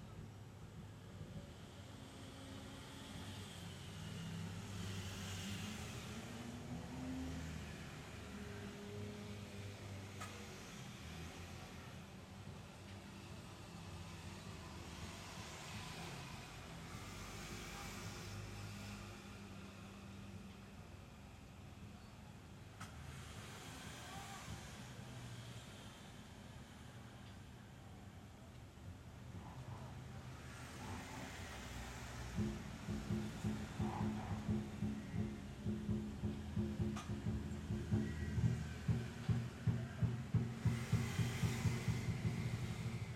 The drums group made the sound in campus.I was recording on balcony. There were cars passing by, and the teams of sport were hitting in playground.